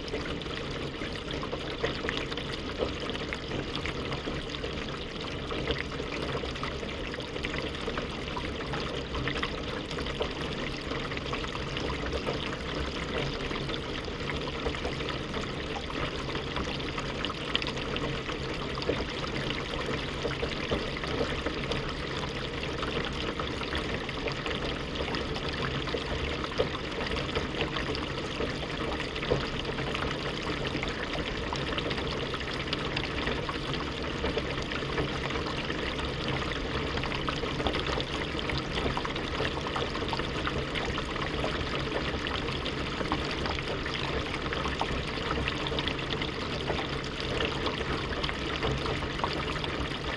Dual contact microphone recording of a drainpipe during rain.
Lazdijų rajono savivaldybė, Alytaus apskritis, Lietuva, 5 June